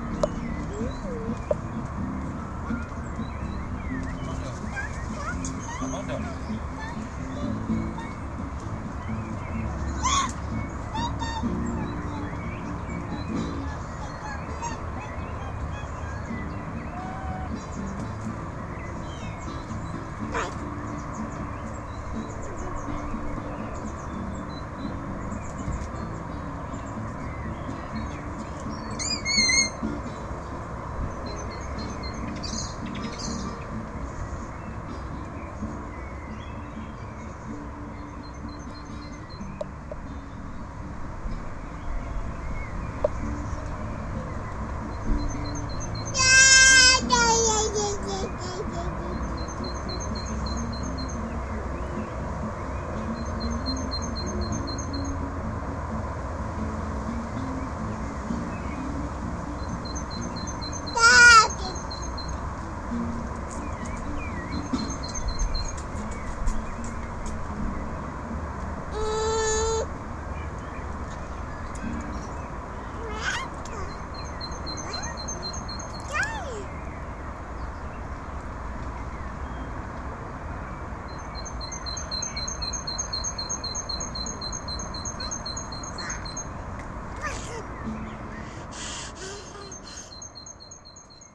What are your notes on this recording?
weekend at the gardens, people playing jazz, birds and kid singing